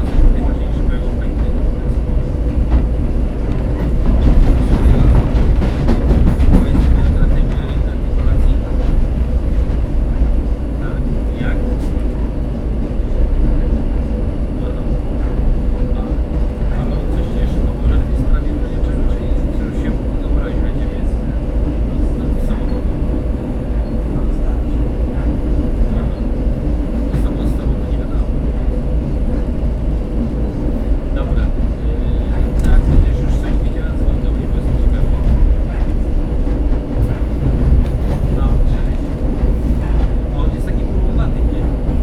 on a train to Poznan
recorded between two stations
2 September 2010, 12:49